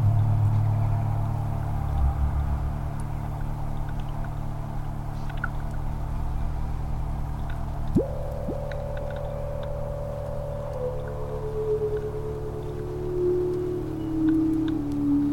Mix of live streams and open mics at Wave Farm in New York's Upper Hudson Valley made on the morning of March 24 including Soundcamp's Test Site of the Acoustic Commons 1, Zach Poff's Pond Station, and Quintron's Weather Warlock.